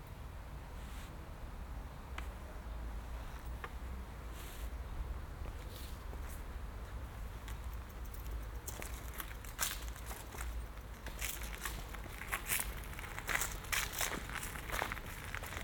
Dresdener Str. / Sebastianstr. - Luisengärten
overgrown garden between houses, seems that there are still remains of the former Berlin Wall, which used to run along this place